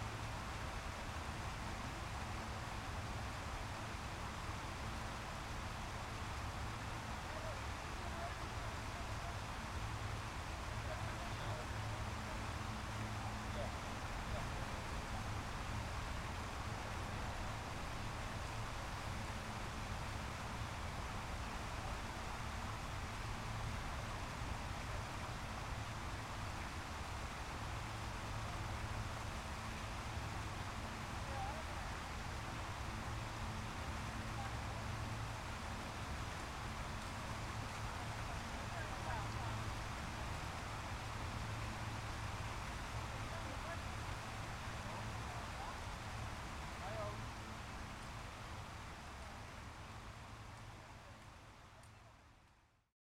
map is older so there's still no funicular to the top of Snezka mountain
Pec pod Sněžkou, Czechia, 15 August 2017